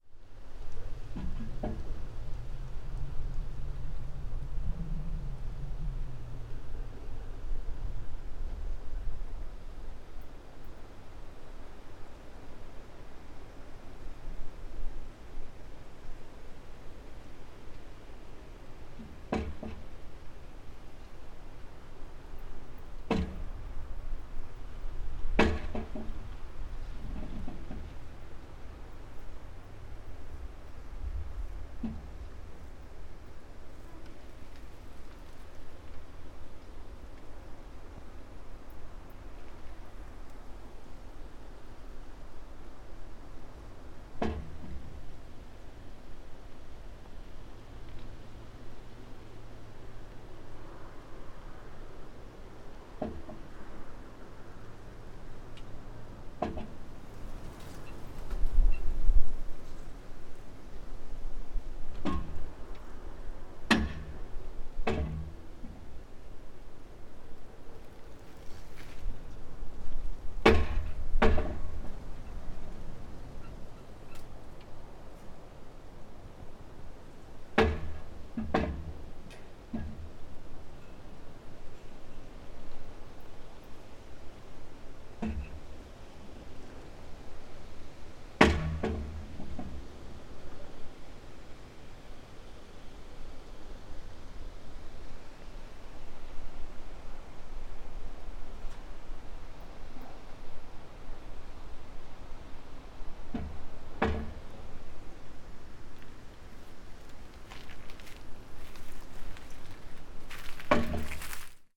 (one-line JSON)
{"title": "quarry, Marušići, Croatia - void voices - stony chambers of exploitation - reflector", "date": "2012-09-10 14:15:00", "description": "broken reflector moved by wind, hitting metal column", "latitude": "45.41", "longitude": "13.74", "altitude": "269", "timezone": "Europe/Zagreb"}